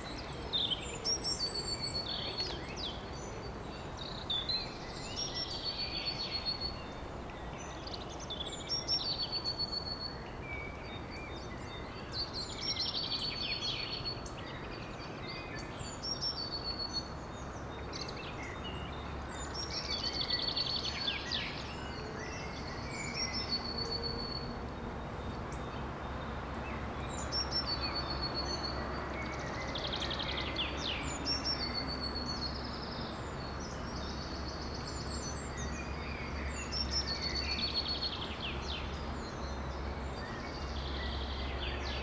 Martha-Stein-Weg, Bad Berka, Deutschland - In the Park in Spring
A binaural recording.
Headphones recommended for best listening experience.
Winds and bird calls, human voices and vehicular drones around the park.
Recording technology: Soundman OKM, Zoom F4.